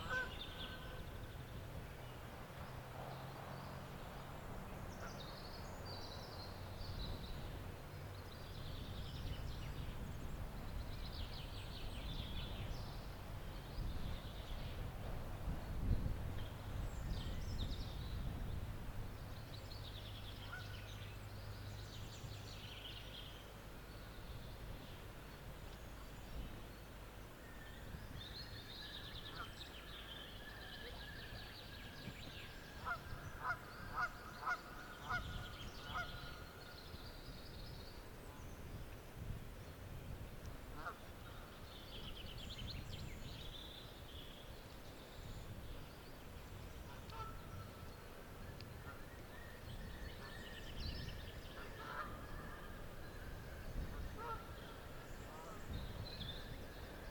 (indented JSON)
{
  "title": "Hope Valley, UK - Ladybower-Geese",
  "date": "2022-03-28 11:45:00",
  "description": "On a wooded headland at the northern end of the reservoir. Sunny spring day.",
  "latitude": "53.44",
  "longitude": "-1.75",
  "altitude": "270",
  "timezone": "Europe/London"
}